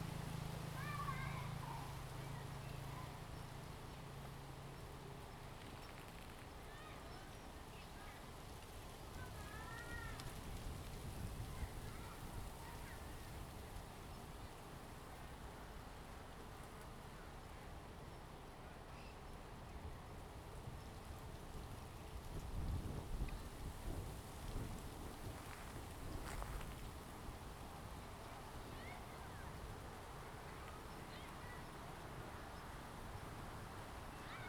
安瀾國小, Jinsha Township - Next to playground
Next to playground, Wind, Student
Zoom H2n MS +XY